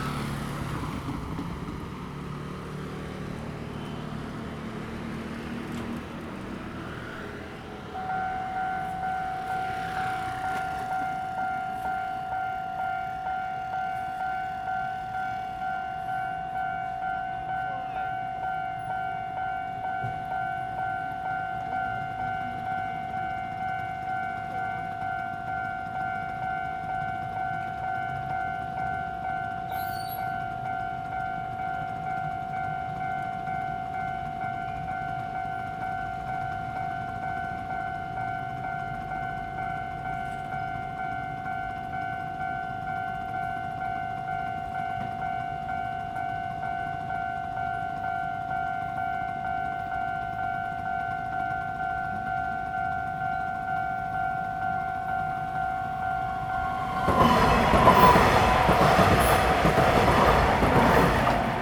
On the railroad crossing, The train runs through, Traffic sound
Zoom H2n MS+XY
15 February 2017, 15:16